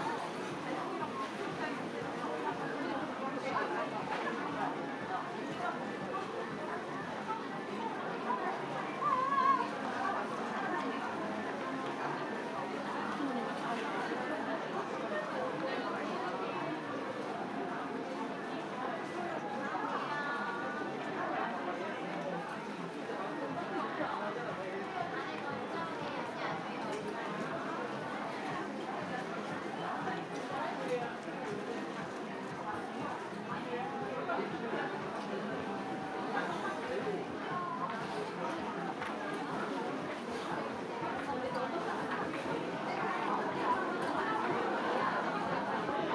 대한민국 서울특별시 서초구 고속버스터미널 지하상가 - Seoul Express Bus Terminal, Underground Shopping Arcade
Seoul Express Bus Terminal, Underground Shopping Arcade, Bicycle Horn
서울고속버스터미널 지하상가, 낮시간, 자전거 빵빵
9 September, 12:33